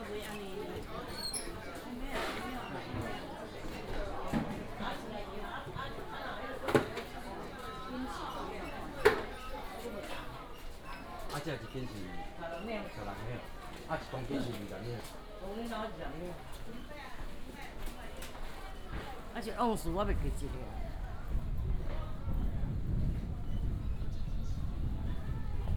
新竹中央市場, Hsinchu City - Traditional markets
Walking in the traditional market inside, Narrow indoor lane
Hsinchu City, Taiwan, 16 January 2017